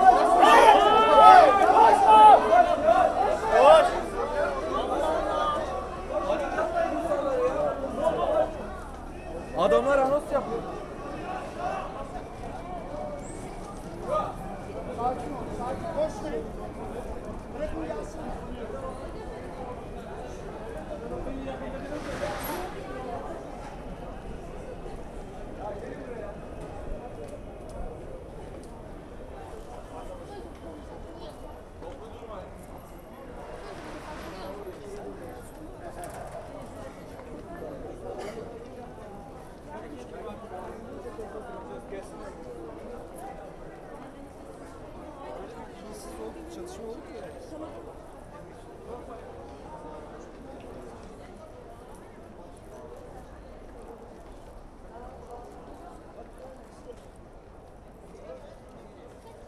The protest in Istanbul still continue, everyday police water cannon trucks and several squadron of policemen over-equiped are positionned in Istiklal .
Istiklal street is one of the more frequented street in Istanbul, activist are screaming their dissatisfaction and are backed by the rest of the people.
Beyoğlu/Istanbul Province, Turkey, August 2013